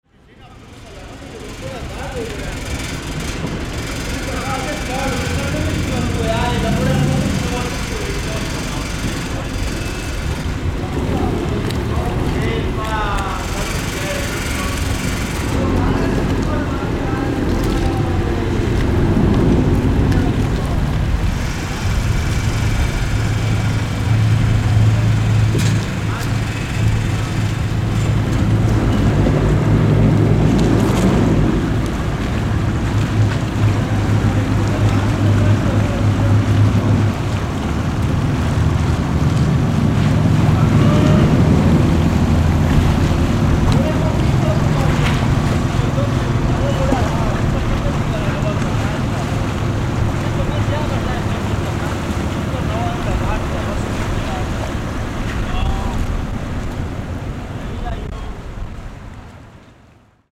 Castello, Venise, Italie - People in Venezia
People in Venezia, near the Biennale, Zoom H6